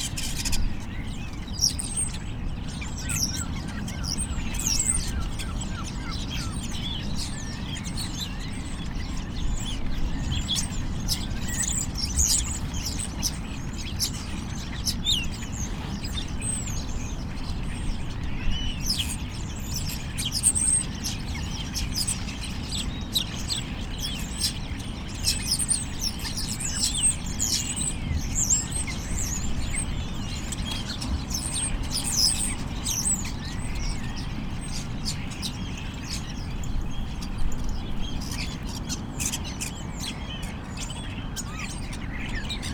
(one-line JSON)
{"title": "Crewe St, Seahouses, UK - Flocking starlings ...", "date": "2018-11-04 07:10:00", "description": "Flocking starlings ... lots of mimicry ... clicks ... squeaks ... creaks ... whistles ... bird calls from herring gull ... lesser black-backed gull ... lavaliers clipped to a sandwich box ... background noise ... some wind blast ...", "latitude": "55.58", "longitude": "-1.65", "timezone": "Europe/London"}